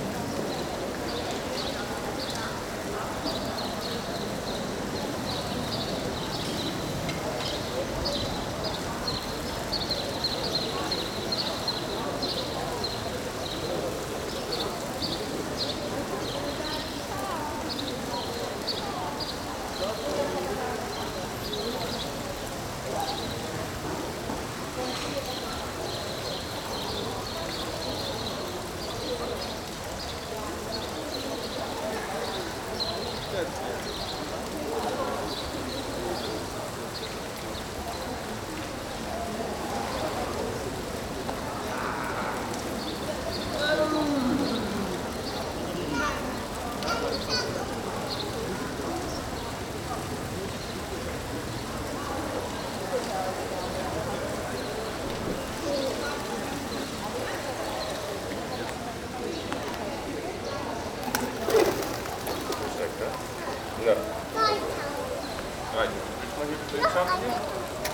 Mannheim, Deutschland - Statue de Wolfgang Heribert von Dalberg
Stadt, Menschen, Restaurants, Vögel, Wasser, Flugzeug, Urban